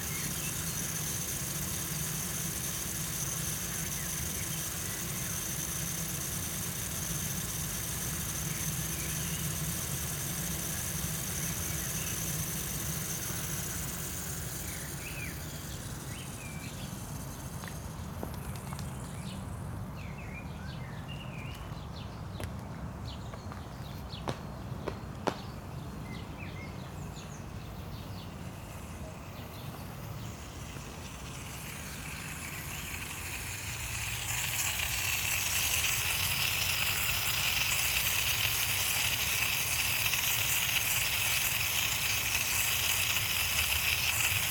inside the park, various watering installations nearby, some of them sort of disfunctional, but running...
(Sony PCM D50 internal mics)